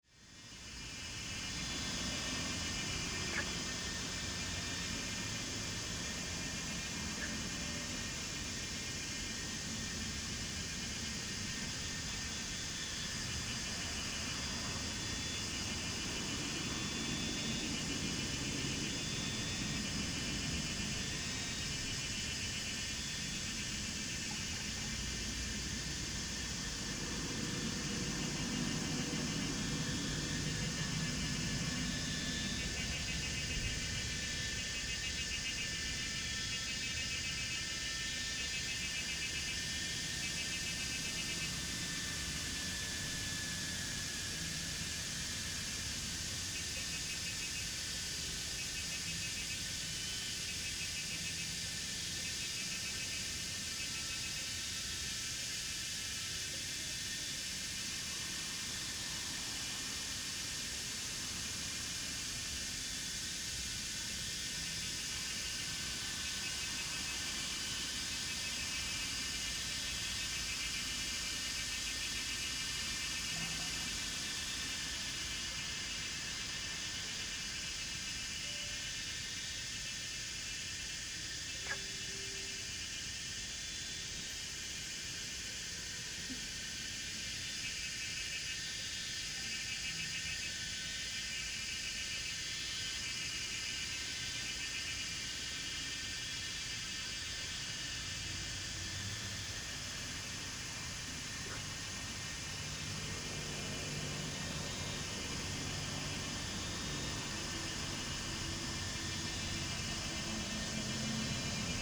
桃米里埔里鎮, Taiwan - Cicadas and Frogs
Cicadas cry, Frogs chirping
Zoom H2n MS+XY
Nantou County, Puli Township, 桃米巷52-12號